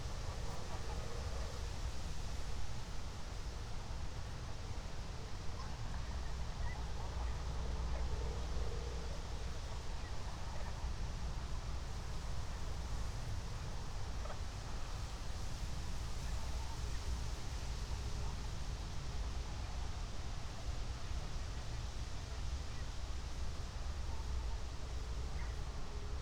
21:04 Berlin, Buch, Moorlinse - pond, wetland ambience